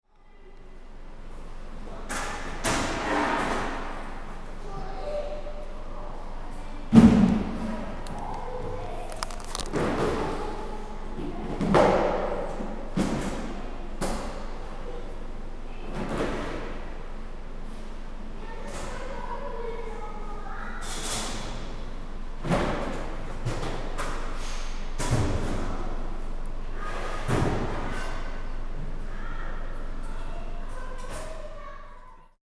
Sports hall setting up for lunch
UK, 8 March 2011, ~11:00